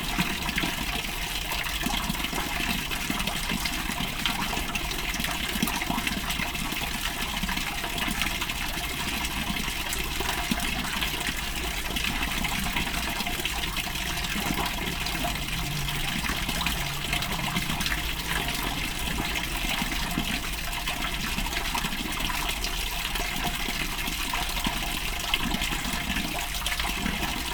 yokohama, drain and waste water at the street
A hidden drain close to the walking path of the street. First a continous flow of waste water then a sudden bigger wave.
international city scapes - social ambiences and topographic field recordings
29 June 2011